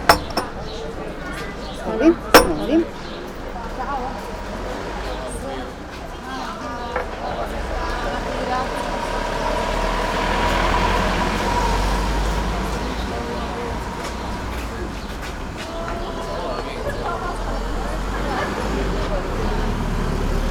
at the moment the most vivid area in small village
Višnjan, Croatia, 12 July 2014, 14:14